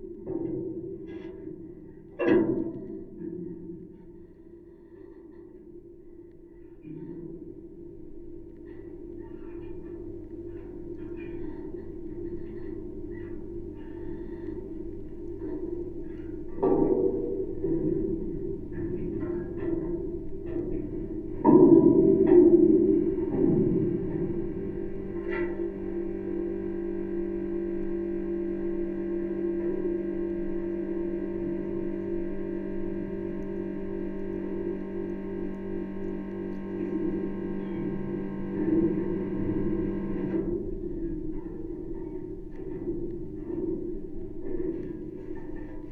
steps on the spiral staircase, also generator or aircon noise, contact mic recording